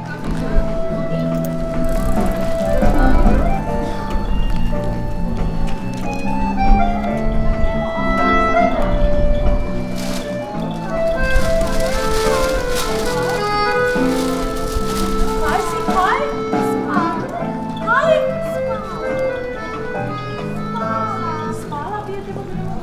Ulica 10. oktobra, Maribor - saturday morning, street musician
walking, people passing by